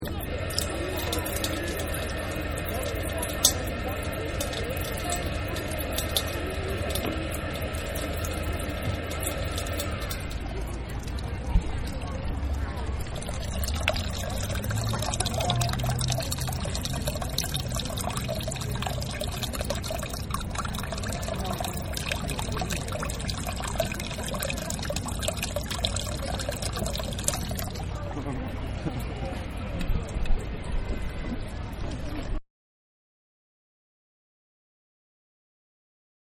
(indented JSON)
{
  "title": "Drinking Fountain, Albert Park, Auckland",
  "date": "2010-09-28 14:30:00",
  "latitude": "-36.85",
  "longitude": "174.77",
  "altitude": "1",
  "timezone": "Pacific/Auckland"
}